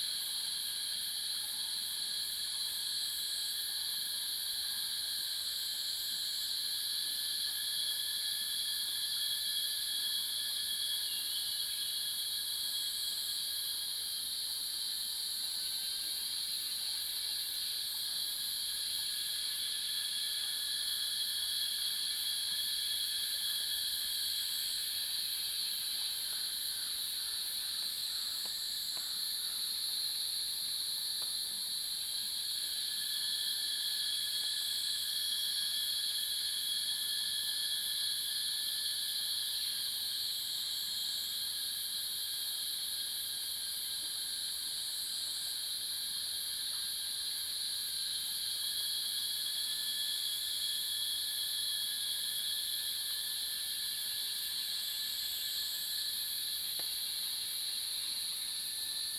華龍巷, 五城村Nantou County - Cicadas and Bird sounds
Cicadas cry, Bird sounds
Zoom H2n MS+XY